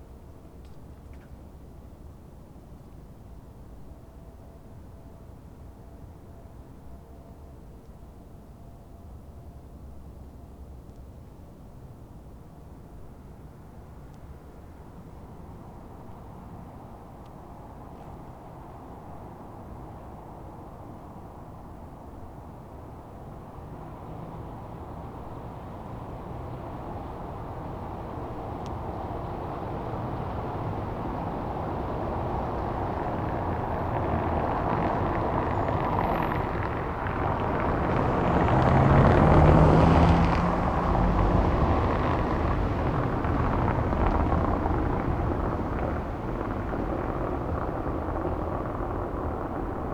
Berlin: Vermessungspunkt Friedel- / Pflügerstraße - Klangvermessung Kreuzkölln ::: 03.11.2011 ::: 02:38
Berlin, Germany, 3 November 2011, 02:38